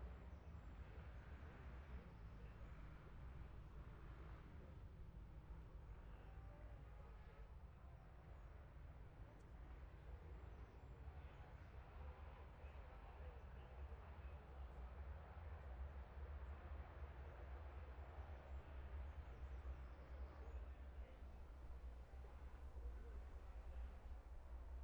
bob smith spring cup ... twins group B practice ... dpa 4060s to MixPre3 ...
Scarborough, UK, 22 May 2021